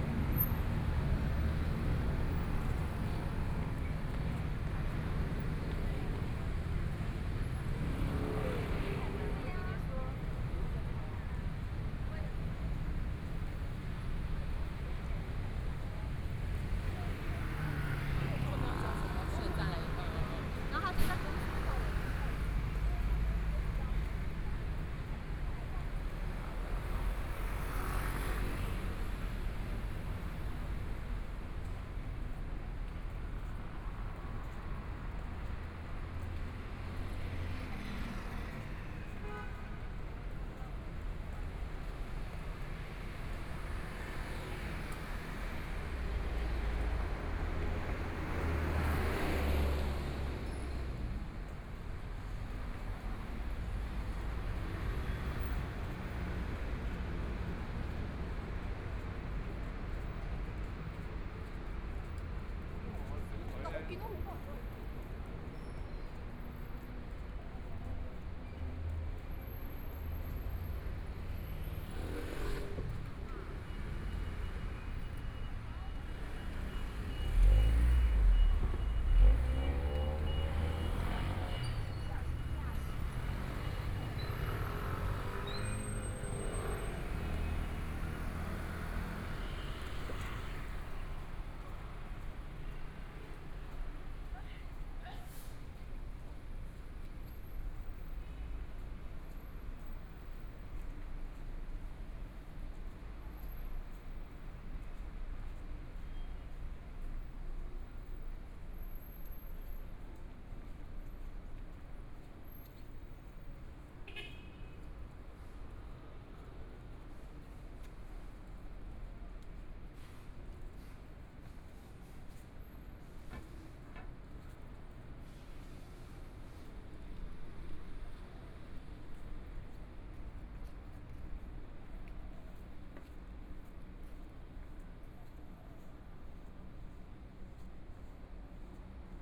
{
  "title": "中山區, Taipei City - walking on the Road",
  "date": "2014-01-20 16:46:00",
  "description": "Walking in the streets, Traffic Sound, Motorcycle sound, Binaural recordings, Zoom H4n+ Soundman OKM II",
  "latitude": "25.06",
  "longitude": "121.52",
  "timezone": "Asia/Taipei"
}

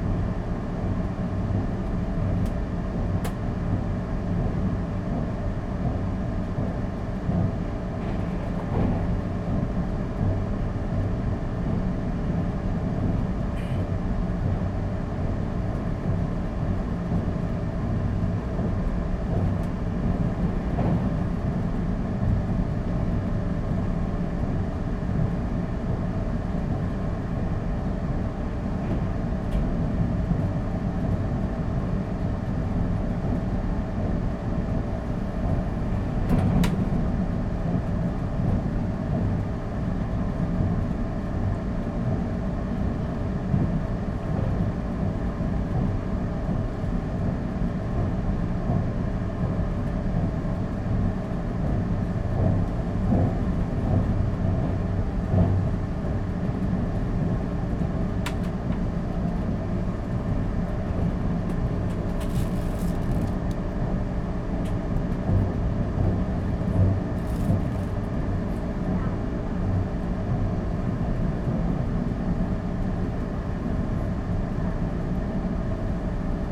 {
  "title": "Guanshan Township, Taitung County - In train carriage",
  "date": "2014-09-09 13:19:00",
  "description": "In train carriage, To Guanshan Station\nZoom H2n MS+ XY",
  "latitude": "23.00",
  "longitude": "121.15",
  "altitude": "207",
  "timezone": "Asia/Taipei"
}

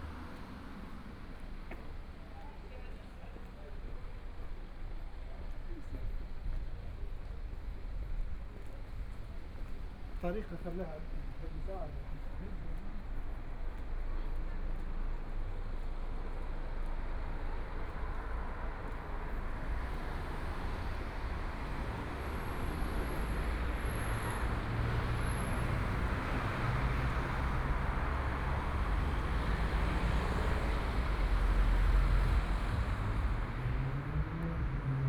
{
  "title": "Schwanthalerstraße, 慕尼黑德國 - walking in the Street",
  "date": "2014-05-10 11:59:00",
  "description": "Walking on the streets at night, Traffic Sound, Voice from traffic lights",
  "latitude": "48.14",
  "longitude": "11.55",
  "altitude": "524",
  "timezone": "Europe/Berlin"
}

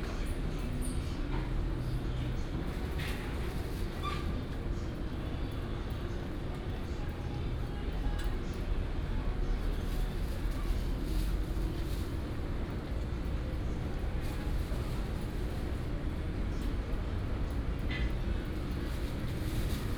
{
  "title": "健康黃昏市場, South Dist., Taichung City - Preparing for business",
  "date": "2017-04-29 13:26:00",
  "description": "Preparing for business before the market",
  "latitude": "24.12",
  "longitude": "120.67",
  "altitude": "60",
  "timezone": "Asia/Taipei"
}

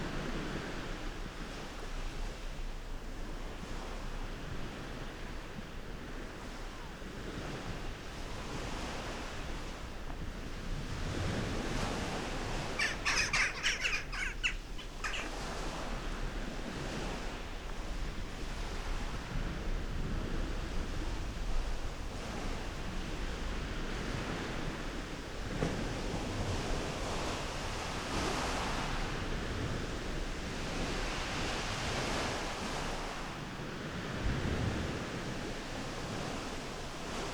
{
  "title": "Agios Georgios Pegeias, Πέγεια, Cyprus - beachcoming on White River Beach",
  "date": "2019-01-20 12:51:00",
  "description": "White River Beach is a lovely, scruffy little beach near Agios Giorgios and the Avakas Gorge on the Akamas Peninsular. We found an amazing little homestead carved in the the stone caves and lots of good wood for burning. As we were in an apartment in Kathikas in January we needed wood and we were being charged 10Eu per bag. On the first day we were there it was very stormy with huge waves. Three days later everything was very quiet. I could even hear the Western Jackdaws · (Coloeus monedula) on the cliffs. The road is unmetaled just after this and you can gently bump along (in an appropriate vehicle) to Lara beach where you may see turtles. Recorded using omni Primo capsules in spaced array to Olympus LS 11",
  "latitude": "34.91",
  "longitude": "32.33",
  "altitude": "10",
  "timezone": "Asia/Nicosia"
}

